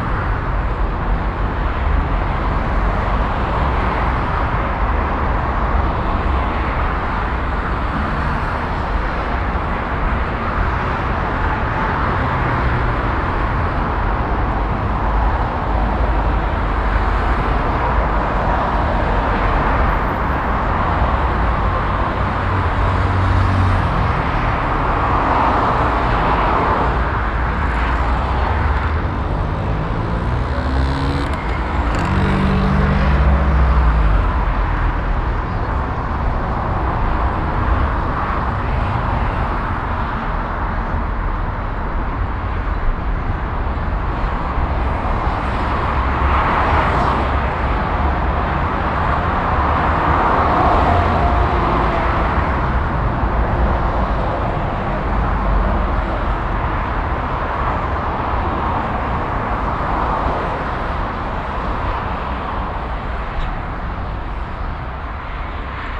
Auf einer Brücke über die A52 an der Stadtausfahrt Essen. Das Rauschen des Verkehrs, der Klang des unterschiedlichen Motoren.
On a bridge over the highway A52 at a city exit. The sound of the traffic and the different motor types.
Projekt - Stadtklang//: Hörorte - topographic field recordings and social ambiences